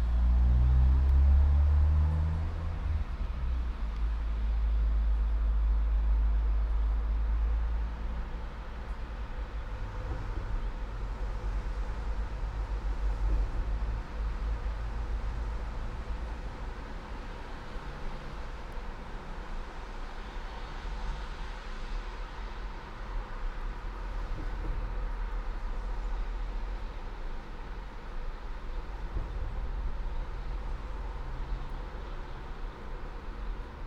all the mornings of the ... - feb 15 2013 fri
Maribor, Slovenia, 15 February 2013